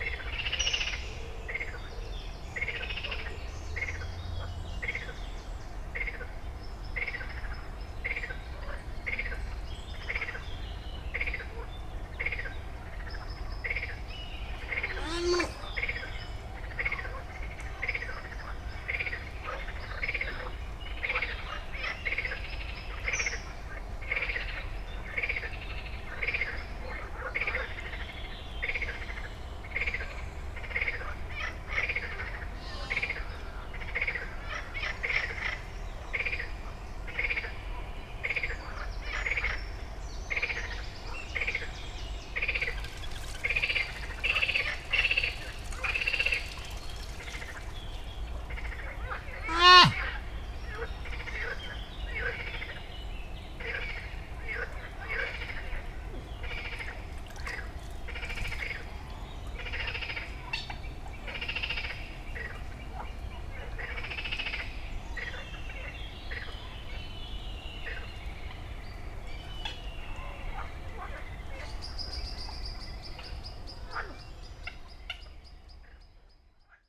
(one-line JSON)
{"title": "Base de sports et loisirs des Quinze sols, Zone de Loisirs des Quinze Sols, Blagnac, France - Ragondins et batraciens à la ripisylve #1", "date": "2020-05-25 21:00:00", "description": "Très rapidement, après avoir posé ce piège à son pour la nuit, la vie sauvage de ce petit paradis de nature (en pleine Métropole toulousaine... sonouillard oblige...) reprend. Il n'aura pas été vain de venir en repérage quelques jours plutôt sans laisser les micros pour décider du meilleur endroit pour le faire. Et, en effet, les petites boules de poils que j'avais entrevu nager en nombre à la surface de l'étang, n'auront pas manqué de faire entendre leurs drôles de voix, pleine de candeur et d'émotion.\nUsi Pro (AB) + Zoom F8", "latitude": "43.66", "longitude": "1.40", "altitude": "125", "timezone": "Europe/Paris"}